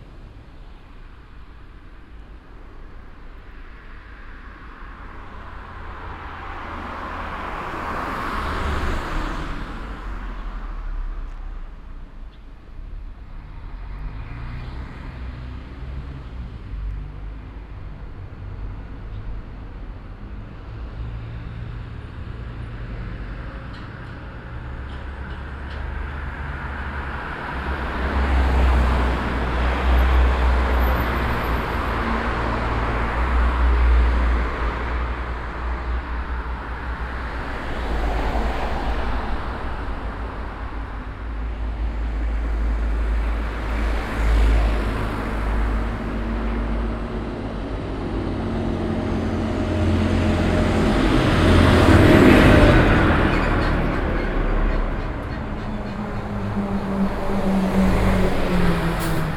tandel, veianerstrooss, traffic
At the through road of the village. The sound of the dense traffic, starting with a bus that comes from the nearby bus station.
Tandel, Veianerstrooss, Verkehr
An der Durchgangsstraße des Dorfes. Das Geräusch von dichtem Verkehr, es beginnt mit einem Bus, der aus dem nahe gelegenen Busbahnhof kommt.
Tandel, Veianerstrooss, trafic
Sur la route qui traverse le village. Le bruit d’un trafic intense ; cela commence par un bus en provenance de la gare routière située à proximité.